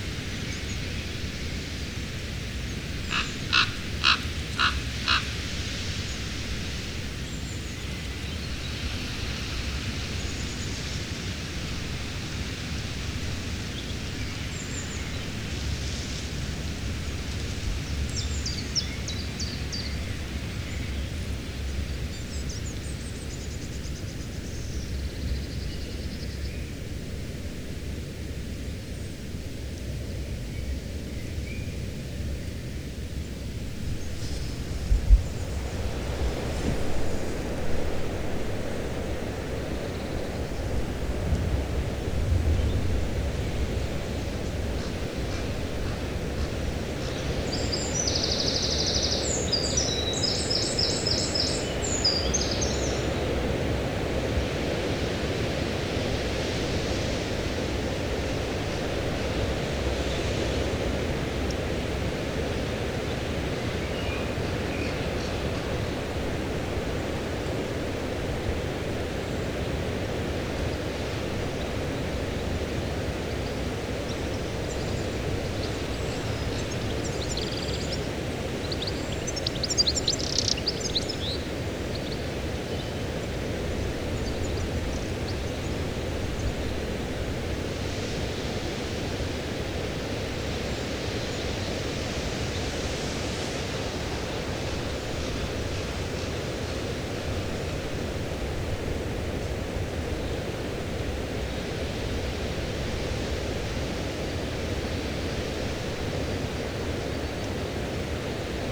Pamphill, Dorset, UK - Blustery, treetop winds and crows
Recorded in a sheltered spot amongst the trees on a very windy day. Equipment used; Fostex FR-2LE Field Memory Recorder using a Audio Technica AT815ST and Rycote Softie
April 2012